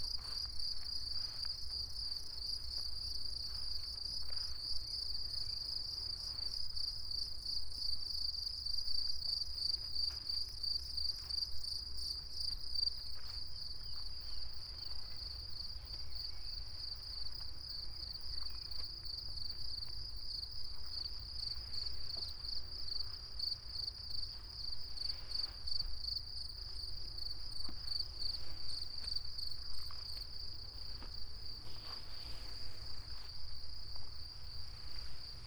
path of seasons, Piramida, Maribor, Slovenia - walk with silky red disc
high grass, crickets, silk, steps, wind
Podravska, Vzhodna Slovenija, Slovenija, 6 June